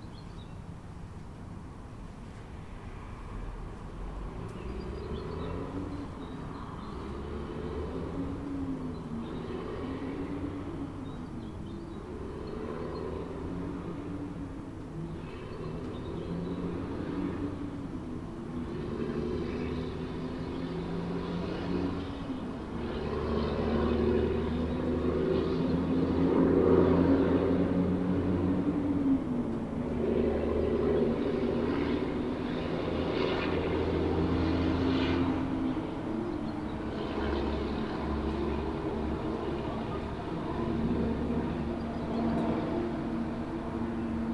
Bretwalder Ave, Leabrook SA, Australia - Soundscape before dawn
Recording from 5:10 am (fifty minutes before sunrise). Within the general distant traffic ambience, you can hear, in early part of the recording, after a distant dog barking, in the foreground, a tawny frogmouth hooting; later, amongst other birds such as magpies, you can hear distant kookaburras.